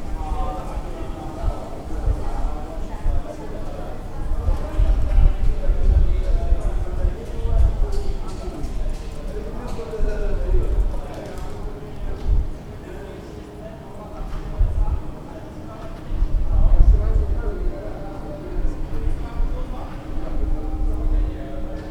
communication space skolska 28, voices from the open window
office of the telephone company with employees calling to clients